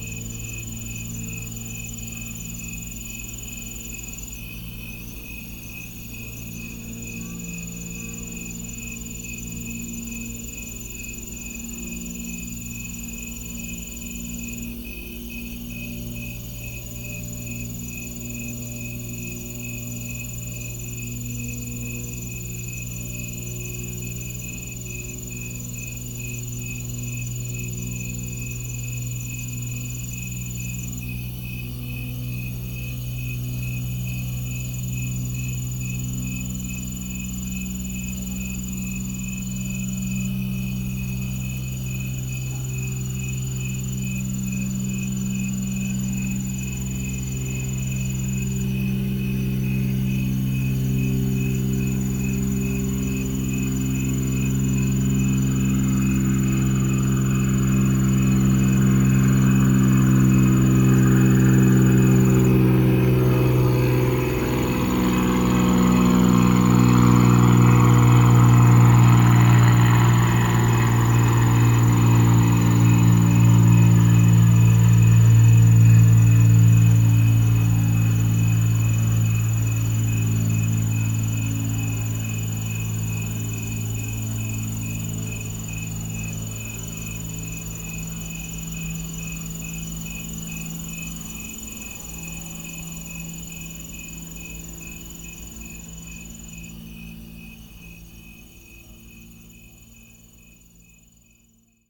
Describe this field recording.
A fixed wing Cessna circles above the fields on the south side of Geneseo. Radio chatter from a state highway patrol car in the background, barely audible over the crickets and cicadas. Stereo mics (Audiotalaia-Primo ECM 172), recorded via Olympus LS-10.